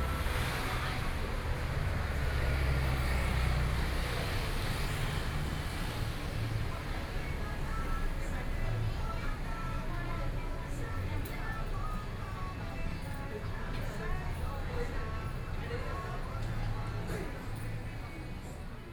Zhongzheng Rd., 羅東鎮中山里 - At the roadside
At the roadside, Various shops voices, Traffic Sound
Luodong Township, Yilan County, Taiwan